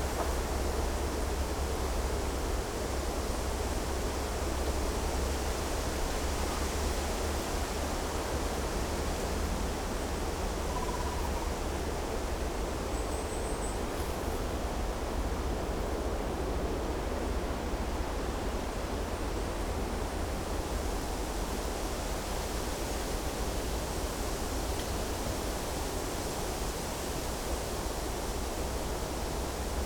Morasko nature reserve - in the windy forest
sounds of a military training on a range a few kilometers away. in a windy forest. (roland r-07)
Poznań, Poland, October 2018